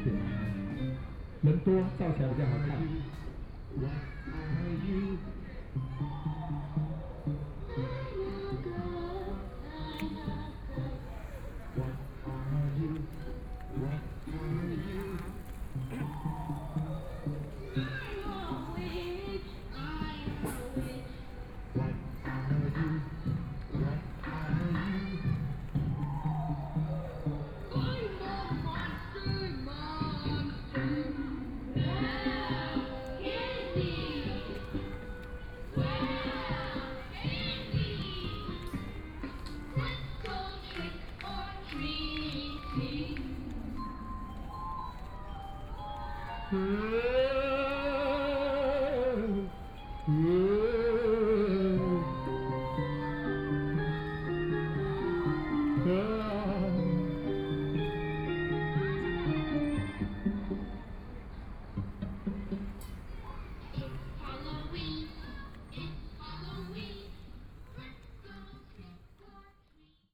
Tamsui District, New Taipei City - party
Riverside Park at night, In the woods next to the restaurant, Just some of the kids games and activities, Binaural recordings, Sony PCM D50 + Soundman OKM II